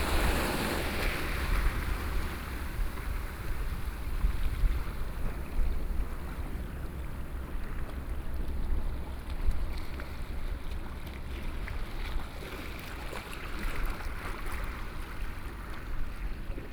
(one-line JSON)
{"title": "萊萊地質區, Gongliao District - Sound of the waves", "date": "2014-07-29 18:03:00", "description": "on the coast, Sound of the waves, Traffic Sound, Hot weather", "latitude": "25.00", "longitude": "121.99", "altitude": "3", "timezone": "Asia/Taipei"}